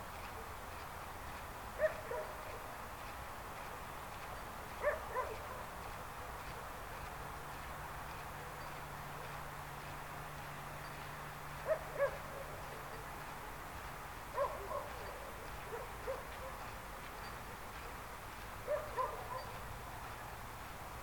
Unnamed Road, Antigonos, Ελλάδα - Corn Fields
Record by: Alexandros Hadjitimotheou
Αποκεντρωμένη Διοίκηση Ηπείρου - Δυτικής Μακεδονίας, Ελλάς